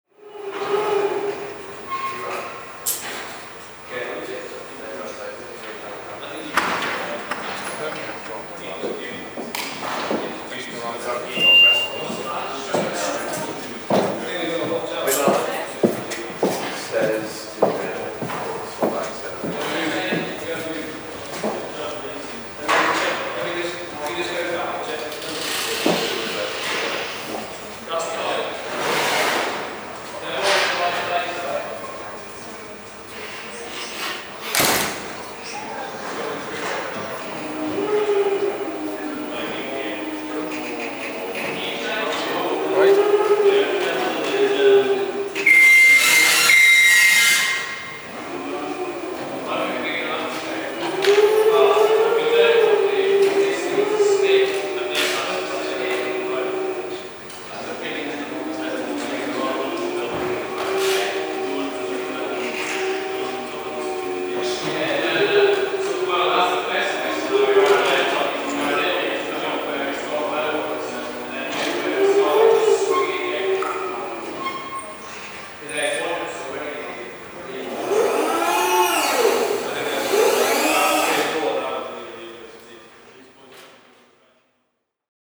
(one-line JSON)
{"title": "Baltic Mill", "date": "2010-06-10 17:30:00", "description": "Installation crews on motorized scissor-lifts", "latitude": "54.97", "longitude": "-1.60", "altitude": "10", "timezone": "Europe/London"}